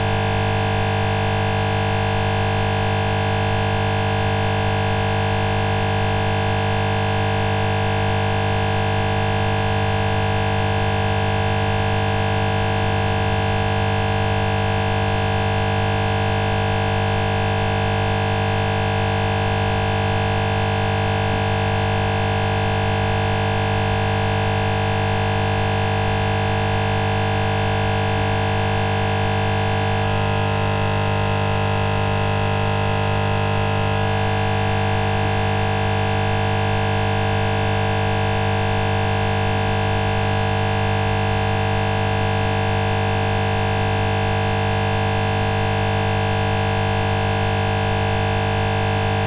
Kaunas, Lithuania, near Kaunas castle - Electrical box
Very close proximity recording of an electrical power grid box. Sharp humming sounds are shifting a little bit in irregular intervals; some background noise from a nearby construction site, people walking by can be heard as well. Recorded with ZOOM H5.